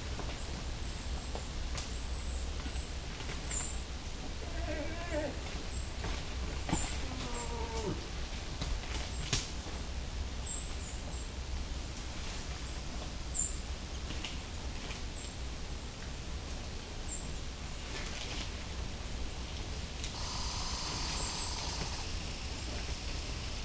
Parry Sound, Unorganized, Centre Part, ON, Canada - Moose Cow&Bull MatingSeason Oct72015 0916
Cow and Bull moose calling and moving through woods during mating season in October, 2016. Recorded at Warbler's Roost in South River, Ontario, Canada.